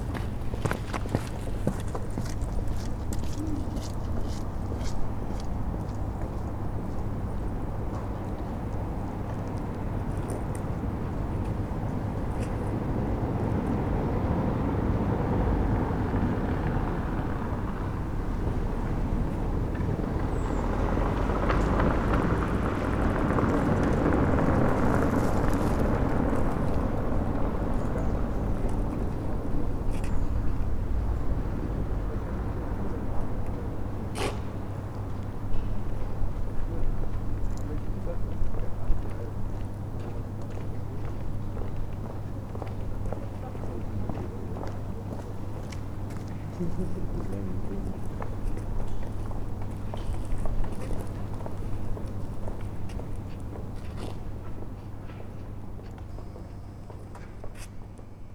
Berlin: Vermessungspunkt Friedel- / Pflügerstraße - Klangvermessung Kreuzkölln ::: 31.10.2013 ::: 23:56